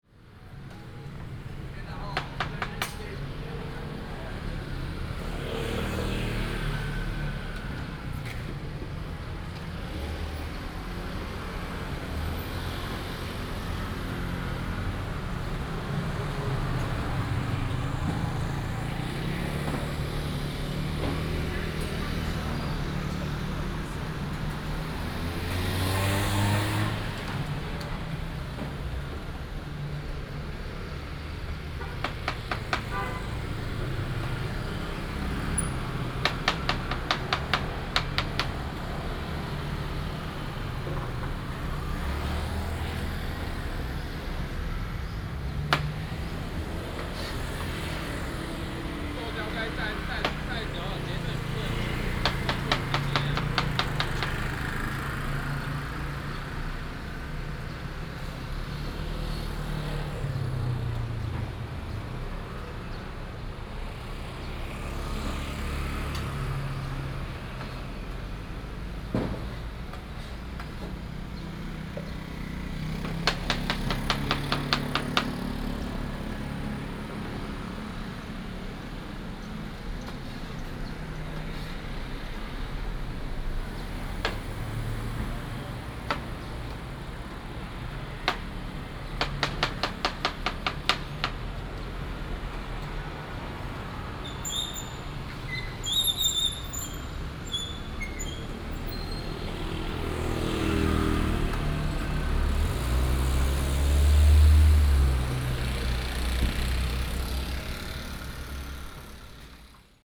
Zhengyi Rd., Guanxi Township - Cut pineapple
At the corner of the road, Traffic sound, Fruit shop, Cut pineapple
Guanxi Township, Hsinchu County, Taiwan, 25 July 2017, ~07:00